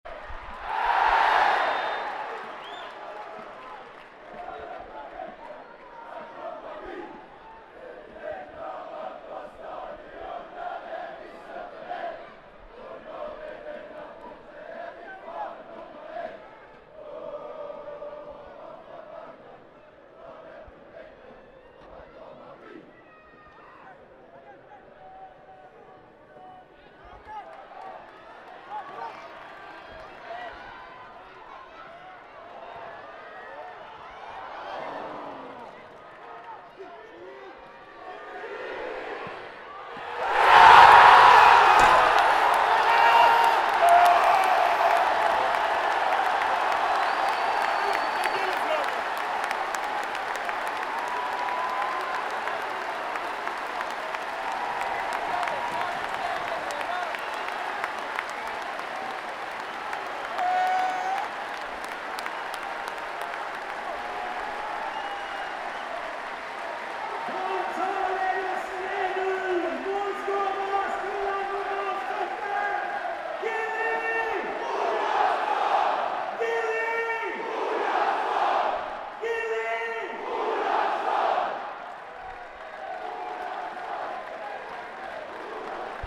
Idrettsveien, Bergen, Norge - Goal!
At the game, the local fotball team (BRANN) scores a goal (at the 40 sec mark. gets loud).
Recorded with a ZOOM H1 right in front of the local supporters at a low gain due to sudden loudnes.
Bergen, Norway, 21 April